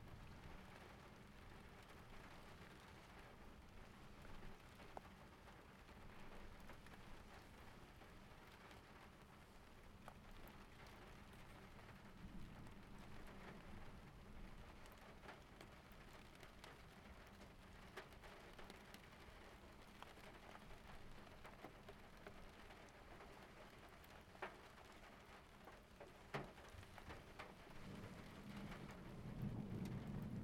North Coogee WA, Australia - Electrical Storm Just Off The Coast
There was a series of electrical storms just off the coast tonight, looming over garden island, and south to Kwinana. I jumped in the car and headed down to the water to catch some better views of the storm, and I waited for it to get close enough to hear. The rain on the roof is the very edge of the storm and the ocean was just flashing from about 5 strikes a minute, 180 degrees around me. Recorded from inside my car, with the window down. The wind noise is the sound of the wind whipping through the door frame. I was getting pretty wet with the window down, but it provided the best sound. Thanks to Zak for the company while recording this, Recorded on a Zoom H2N, Zoom windshield, with ATH-M40x headphones.
November 2017